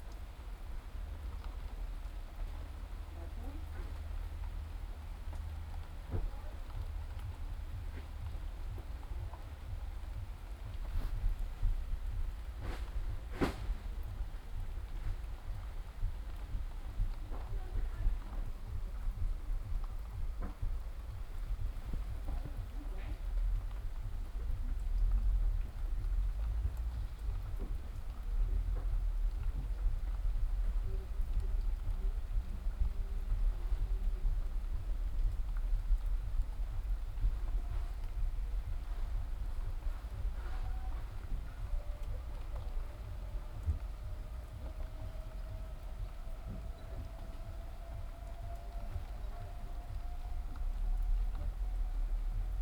berlin, schwarzer kanal, inside - berlin, schwarzer kanal, inside